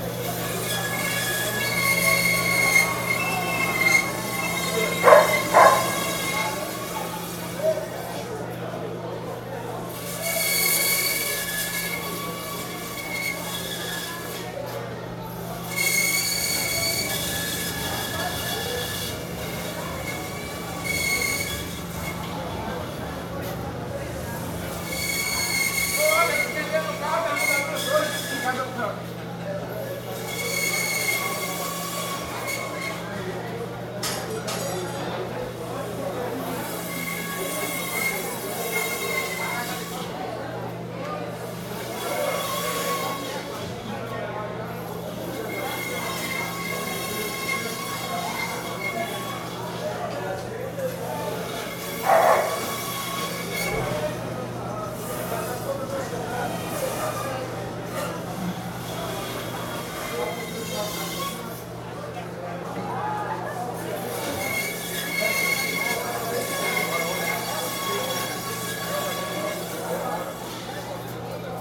Máquina cortando pé de boi.
Machine cutting ox foot.
Brazil, 27 January 2018